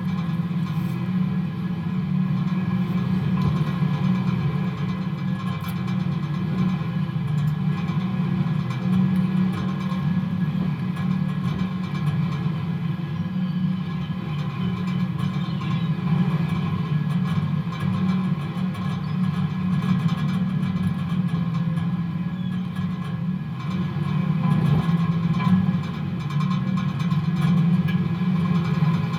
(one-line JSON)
{"title": "wind on a metal telephone pole, Istanbul", "date": "2010-02-22 15:00:00", "description": "heavy wind blowing across a metal telephone pole on Burgazada", "latitude": "40.88", "longitude": "29.06", "altitude": "39", "timezone": "Europe/Tallinn"}